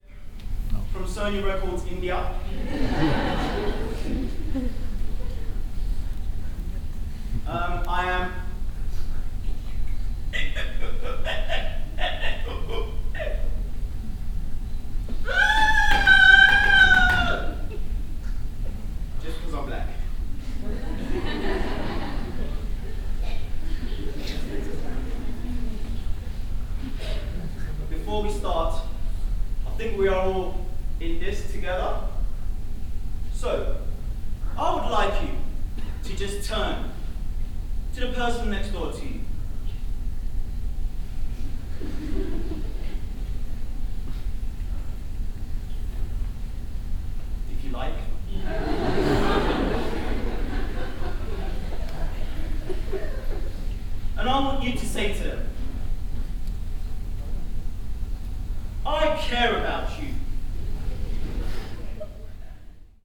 ataka, ranga shankar theatre - bangalor, karnataka, ranga shankar theatre
a second recording at the same location - here an excerpt of a performance
international city scapes- social ambiences, art spaces and topographic field recordings